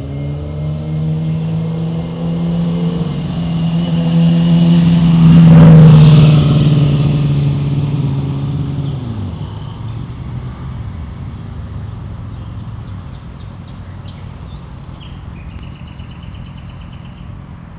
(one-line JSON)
{"title": "Großer Tiergarten, am sowjetischen Ehrenmal, Berlin, Germany - 3 nachtigallen am sowjetischen ehrenmal, tiergarten", "date": "2015-05-04 23:42:00", "description": "3 nachtigallen (17 augenblicke des fruehlings)\n3 nightingales (17 moments of spring)", "latitude": "52.52", "longitude": "13.37", "altitude": "34", "timezone": "Europe/Berlin"}